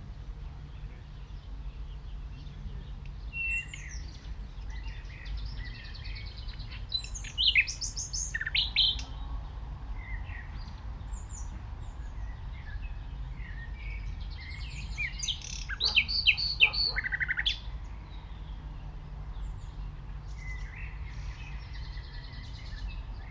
The nightingale's perspective, The Wet Triangle, Brehmestraße, Berlin, Germany - The nightingale's perspective
Recorded from an audio stream left overnight at this location. The mics were hidden in a thick bramble bush. The recording starts as a nice morning atmosphere with distance bell. A lesser whitethroat and blackbird are singing. The nightingale is sings very close by (would not happen if the recordist was personally present). It seems as if we are listening from his perspective. Around 7min30 dogs and (human) dog walkers pass by chatting. Again I get the rather odd impression that I'm hearing what the nightingale is hearing. He does pause slightly, maybe just checking, before singing again.